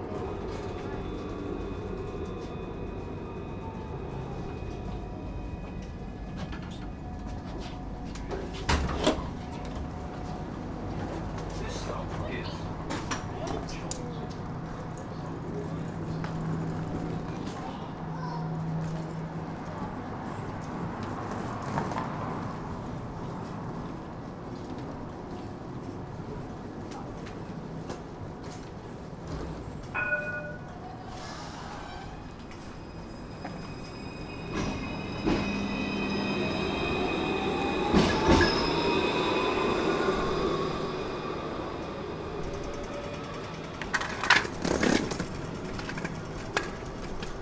Whitehorse Rd, Balwyn VIC, Australia - Exit train, cross road
exiting 109 tram and cross the road in balwyn